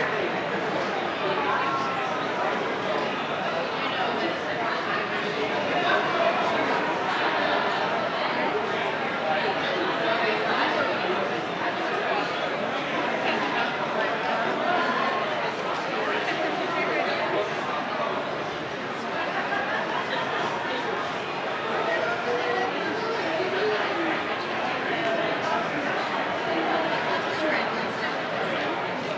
{
  "title": "Hill St, Belfast, UK - Commercial Court-Exit Strategies Summer 2021",
  "date": "2021-07-04 18:23:00",
  "description": "Recording of the bars being reopened in the famous bar district in town. People are sitting and chatting away on outdoor sitting arrangements. There are tourists and locals walking around, some taking photos, others discussing the appeal of the bars. There is some background glassware being heard and muffled bar chats.",
  "latitude": "54.60",
  "longitude": "-5.93",
  "altitude": "6",
  "timezone": "Europe/London"
}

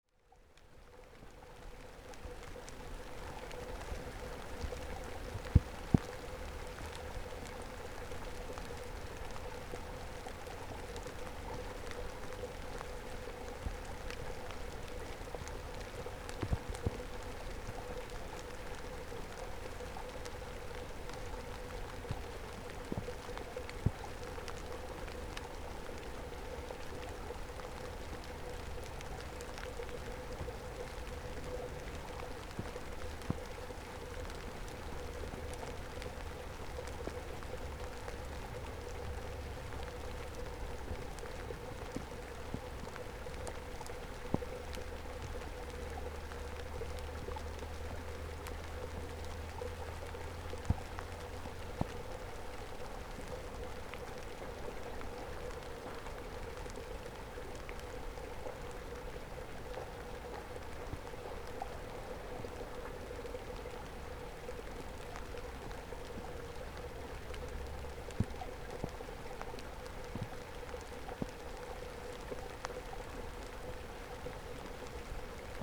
{
  "title": "Lithuania, Pakalniai, rain amongst reeds",
  "date": "2012-11-27 15:30:00",
  "description": "swamp, reeds, streamlet and autumnal rain",
  "latitude": "55.43",
  "longitude": "25.47",
  "altitude": "158",
  "timezone": "Europe/Vilnius"
}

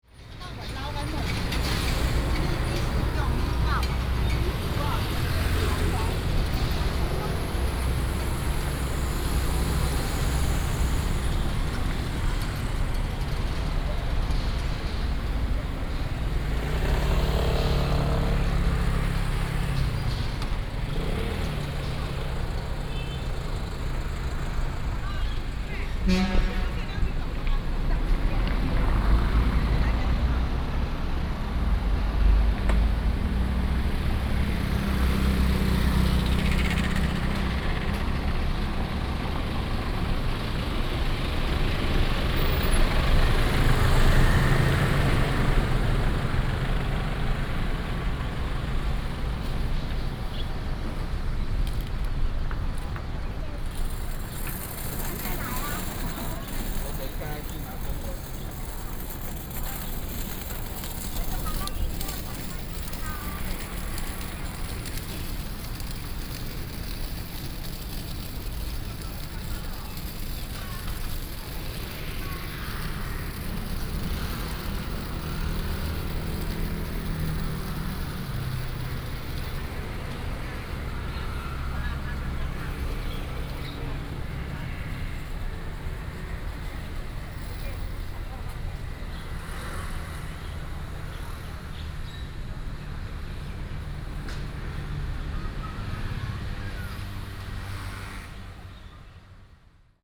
{
  "title": "Donglin Rd., Zhudong Township - Walking on the road",
  "date": "2017-01-17 11:47:00",
  "description": "Walking on the road, trolley, Traffic sound, The sound of birds",
  "latitude": "24.74",
  "longitude": "121.09",
  "altitude": "123",
  "timezone": "Asia/Taipei"
}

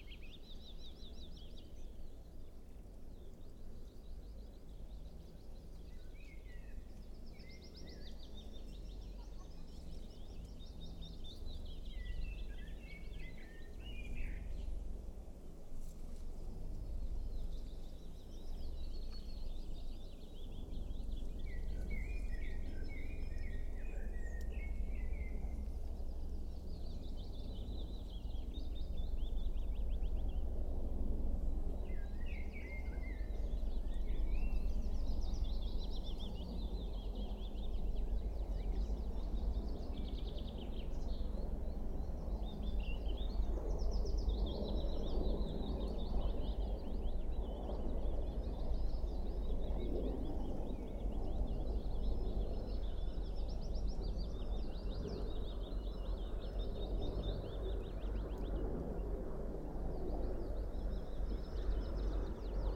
{
  "title": "Nationale Park Hoge Veluwe, Netherlands - Deelensewas Helicopter",
  "date": "2020-05-26 14:16:00",
  "description": "Soundfield microphone (stereo decode) Birds, Military Helicopter.",
  "latitude": "52.09",
  "longitude": "5.86",
  "altitude": "47",
  "timezone": "Europe/Amsterdam"
}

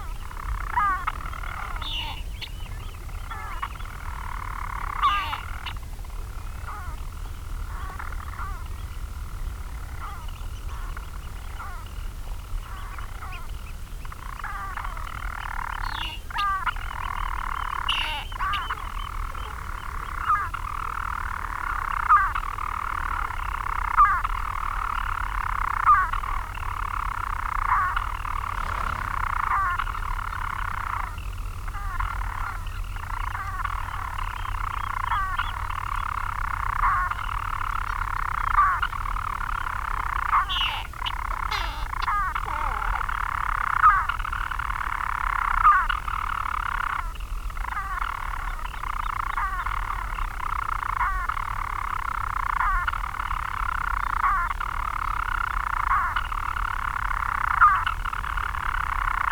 {
  "title": "Marloes and St. Brides, UK - european storm petrel ...",
  "date": "2016-05-16 03:20:00",
  "description": "Skokholm Island Bird Observatory ... storm petrel singing ..? birds nest in chambers in the dry stone walls ... the birds move up and down the space ... they also rotate while singing ... lots of thoughts that this was two males in adjacent spaces ... open lavalier mics clipped to a sandwich box ... on a bag close to the wall ...",
  "latitude": "51.70",
  "longitude": "-5.27",
  "altitude": "34",
  "timezone": "Europe/London"
}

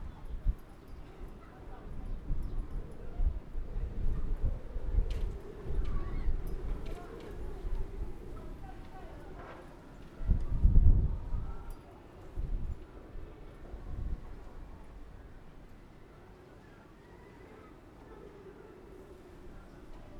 {
  "title": "芳苑村, Fangyuan Township - The sound of the wind",
  "date": "2014-03-09 08:41:00",
  "description": "The sound of the wind, On the streets of a small village\nZoom H6 MS",
  "latitude": "23.93",
  "longitude": "120.32",
  "altitude": "5",
  "timezone": "Asia/Taipei"
}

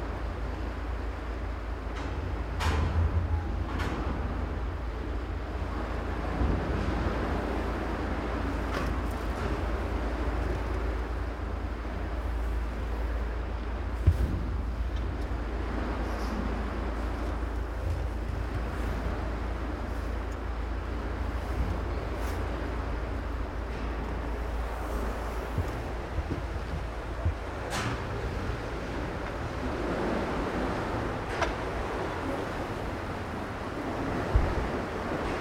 {
  "title": "Quai du Platier, Paimpol, France - Passage écluse de Paimpol",
  "date": "2022-04-24 14:56:00",
  "description": "Passage de l'écluse de Paimpol à bord d'un voilier, entrée au port. Enregistré avec un couple ORTF de Sennehiser MKH40 et une Sound Devices Mixpre3.",
  "latitude": "48.78",
  "longitude": "-3.04",
  "altitude": "2",
  "timezone": "Europe/Paris"
}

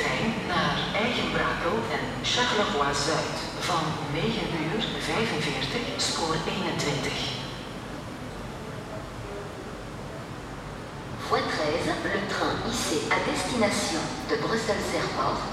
{
  "title": "Gare du Midi, Saint-Gilles, Belgique - Platform 3b ambience",
  "date": "2021-07-27 10:00:00",
  "description": "Synthetic voices for trains announcement, conversations on the platform, birqs nesting in the steel structure.\nTech Note : Sony PCM-D100 internal microphones, wide position.",
  "latitude": "50.83",
  "longitude": "4.33",
  "altitude": "27",
  "timezone": "Europe/Brussels"
}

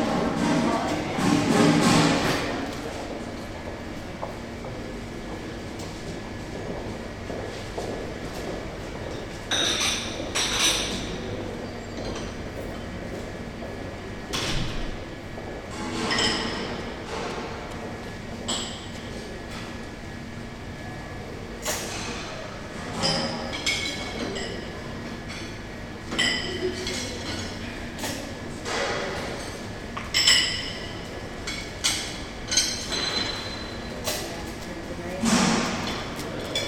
{
  "title": "frankfurter allee, jugendamt, passage",
  "date": "2008-08-15 11:00:00",
  "description": "15.08.2008 11:00 passage",
  "latitude": "52.52",
  "longitude": "13.46",
  "altitude": "43",
  "timezone": "Europe/Berlin"
}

